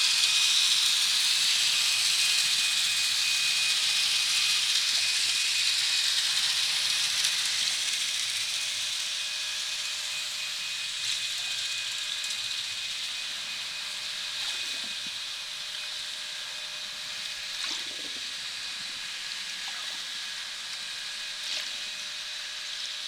Hydrophone noise pollution at Karaköy, Istanbul
noisy underwater environments because of boat traffic